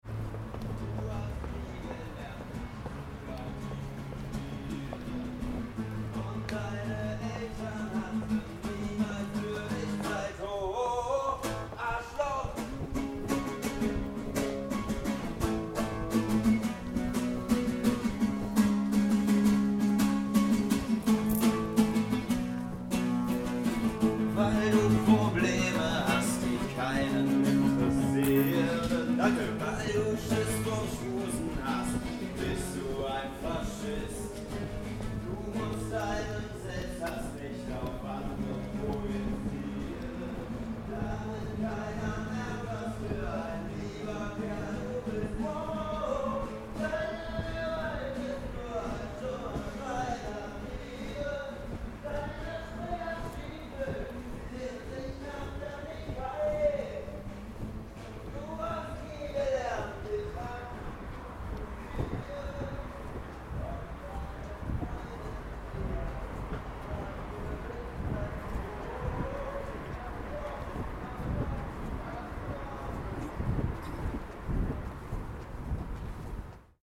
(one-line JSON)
{"title": "Deutz, Köln, Deutschland - Schrei nach Liebe / Cry for love", "date": "2015-04-22 14:20:00", "description": "Köln, Deutz, Straßenmusiker, Cologne, Street Musician, Schrei nach Liebe, Die Ärzte, Cry for love", "latitude": "50.94", "longitude": "6.97", "altitude": "56", "timezone": "Europe/Berlin"}